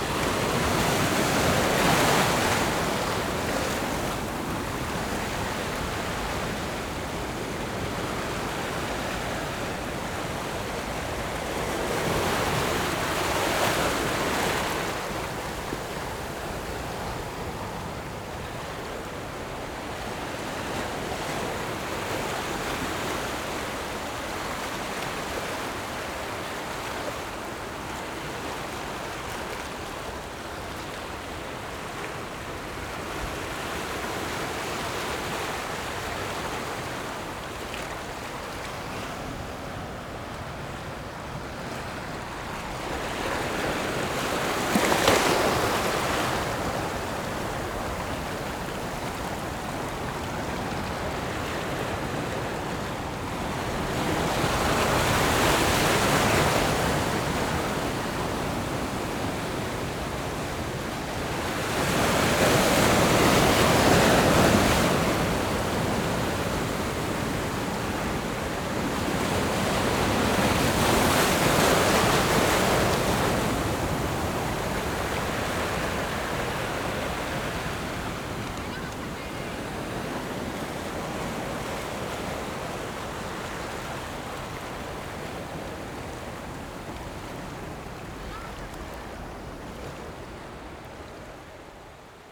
頭城鎮大里里, Yilan County - Sound of the waves
Sound of the waves, On the coast
Zoom H6 MS mic + Rode NT4